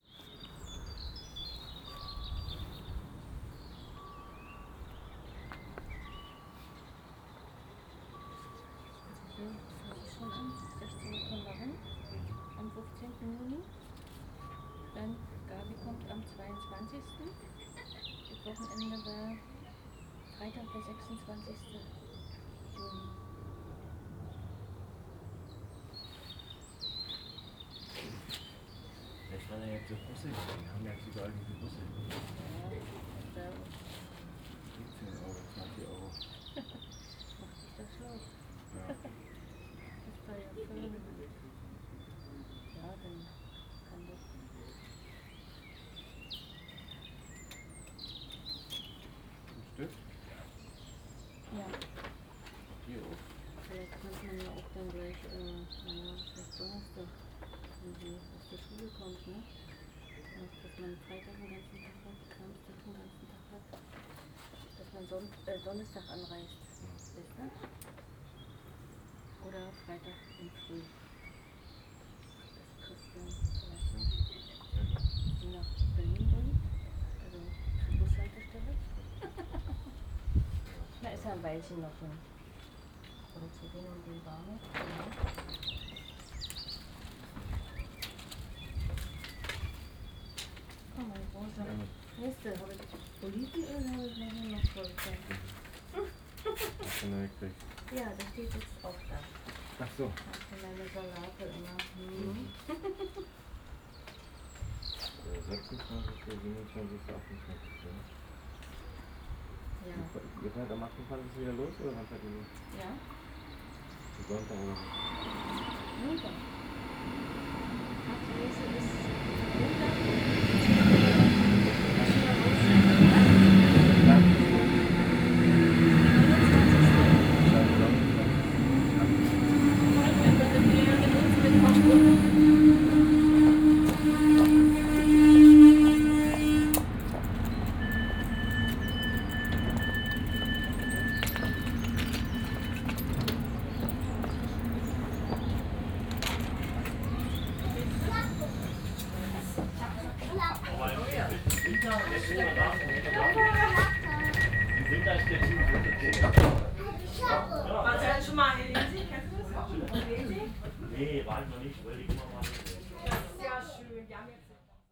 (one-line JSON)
{"title": "Hangelsberg, Deutschland - station ambience, train arrives", "date": "2015-04-11 18:55:00", "description": "regional train arrives at Hangelsberg station.\n(Sony PCM D50, OKM2)", "latitude": "52.40", "longitude": "13.92", "altitude": "45", "timezone": "Europe/Berlin"}